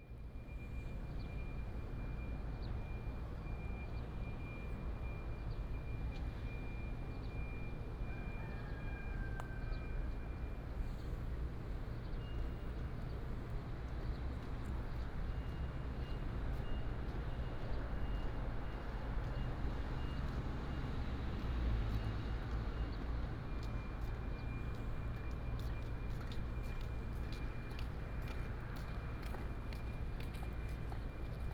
In the vicinity of the railway crossing, The train passes by, Binaural recordings, Sony PCM D100+ Soundman OKM II
Miaoli County, Houlong Township, 舊後汶公路118-2號, 19 September 2017, ~16:00